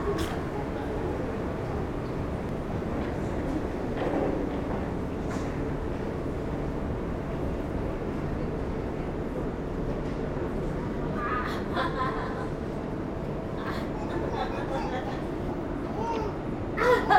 Brugge, België - Brugge station
At the Bruges station. Passengers are in a hurry : sound of the suitcases on the cobblestones. Entering into the station, intense reverberation in the reception hall. A person explains what to do to tourists. Walking to the platforms, intercity trains upcoming. Supervisors talking and some announcements.